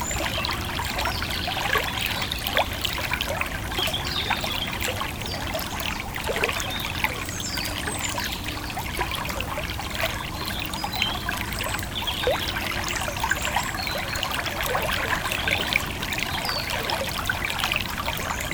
Court-St.-Étienne, Belgique - The Thyle river
The Thyle river, on a quiet rural place.
Court-St.-Étienne, Belgium, 2 October 2015